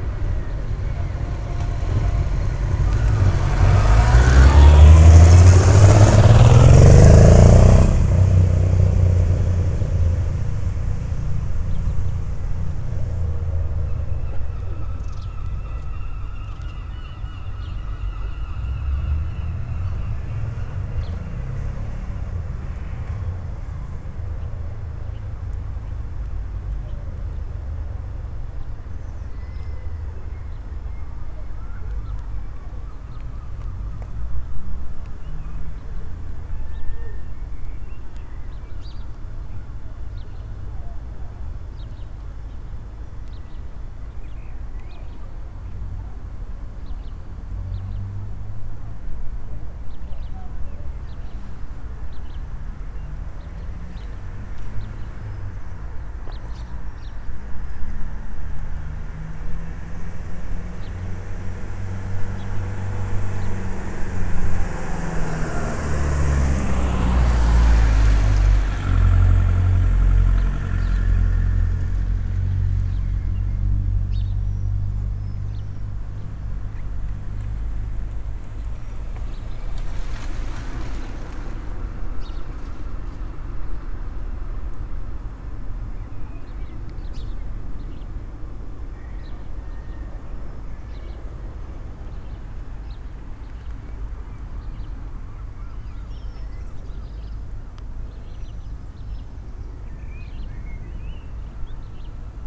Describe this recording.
Sunday morning - waiting for my lift to Manchester